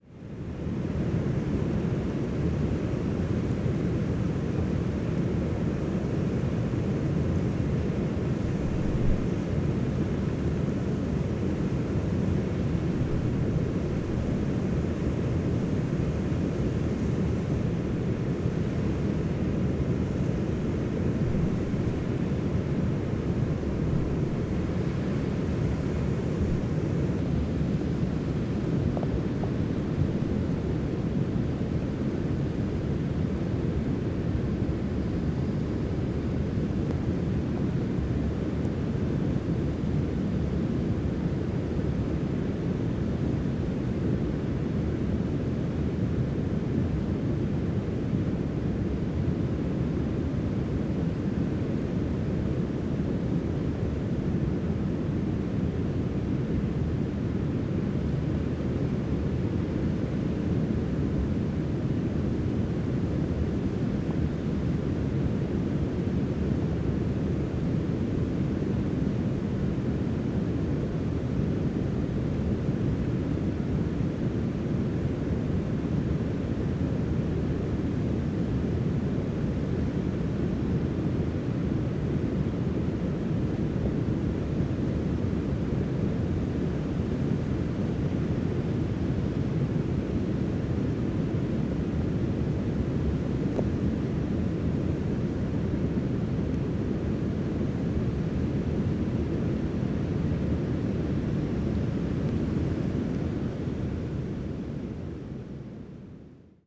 Parques Nacional Yendegaia, Magallanes y la Antártica Chilena, Chile - storm log - the watershed
Rockwell Kent Trail wathershed, wind SW 20 km/h, ZOOM F1, XYH-6 cap
Almost 100 years ago the artist and explorer Rockwell Kent crossed the Baldivia Chain between Seno Almirantazgo and the Beagle Channel via the Lapataia Valley. His documentation* of the landscape and climate is one of the first descriptions of this passage and serves as an important historic reference.
The intention of this research trip under the scientific direction of Alfredo Prieto was to highlight the significance of indigenous traces present in Tierra del Fuego, inter-ethnic traces which are bio-cultural routes of the past (stemming from the exchange of goods and genes). In particular, we explored potential indigenous cultural marks that Rockwell Kent described, traces that would connect the ancestors of the Yagán community with the Kawesqar and Selk’nam in the Almirantazgo Seno area.
*Rockwell Kent, Voyaging, Southward from the Strait of Magellan, G.P. Putnam’s Sons/The Knickerbocker Press, 1924
Región de Magallanes y de la Antártica Chilena, Chile, 24 February 2021